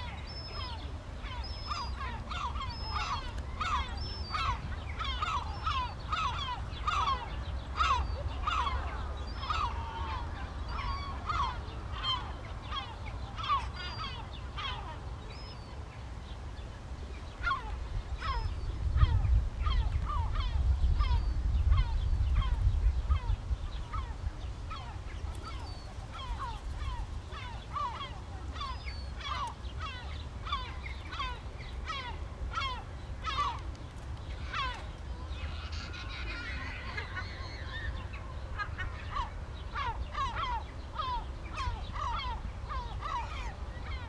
Tallinn, oismae, pond - inner circle, pond
pond in the middle oismae, the utopia of an ideal city